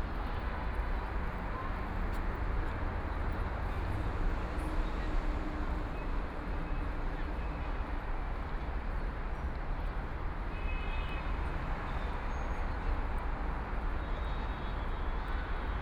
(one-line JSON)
{"title": "Wujiaochang, Yangpu District - Sunken plaza", "date": "2013-11-21 18:00:00", "description": "in the Sunken plaza, There are many people coming and going on the square, Traffic noise above the Square, Binaural recording, Zoom H6+ Soundman OKM II", "latitude": "31.30", "longitude": "121.51", "altitude": "7", "timezone": "Asia/Shanghai"}